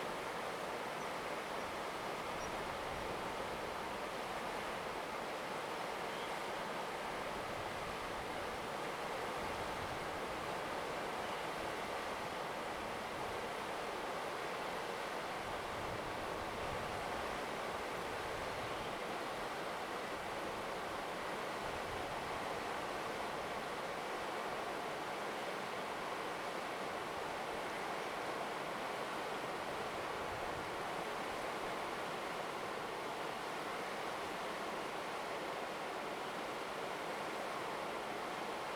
土坂吊橋, Daren Township, Taitung County - Beside the suspension bridge
Beside the suspension bridge, traffic sound, Bird call, Stream sound
Zoom H2n MS+XY
Taitung County, Daren Township, 東68鄉道70號, 13 April 2018, ~14:00